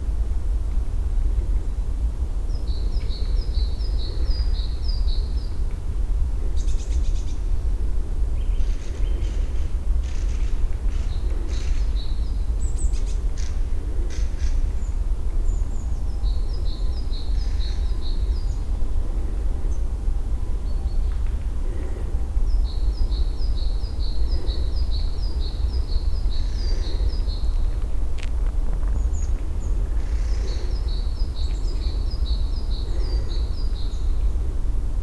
Morasko nature reserve, beaver pond - woodpeckers and others
(binaural) a warm, sunny day in the forest. even though it's february many birds are active. beak knocking of the woodpeckers spreads around the forest.